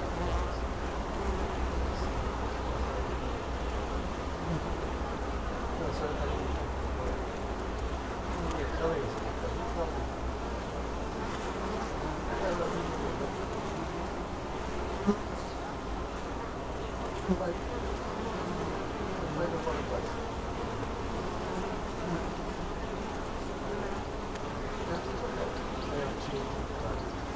kunstGarten, graz, austria - bees, high grass, people, young boy ...